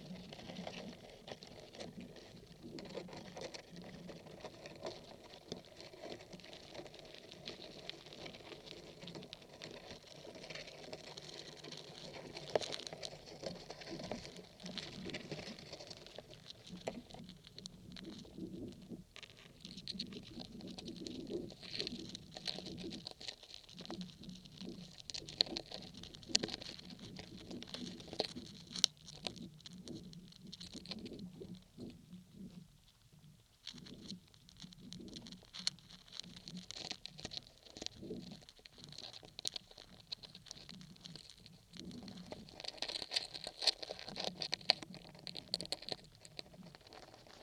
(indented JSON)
{
  "title": "Lithuania, Nuodeguliai, ants on the stump",
  "date": "2011-07-29 13:20:00",
  "description": "Old village cemetery, some half rotten birch inn the middle of it. And the anthill at the birch. I placed contact mics and so here are ants walking through on wood and wind playing",
  "latitude": "55.57",
  "longitude": "25.74",
  "timezone": "Europe/Vilnius"
}